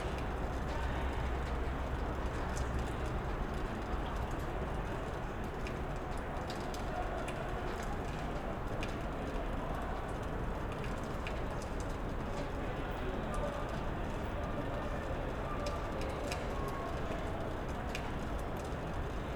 Köln, Maastrichter Str., backyard balcony - night ambience
backyard, night ambience: city sounds, voices, echo of trains
(SD702, Audio Technica BP4025)
24 April 2013, ~23:00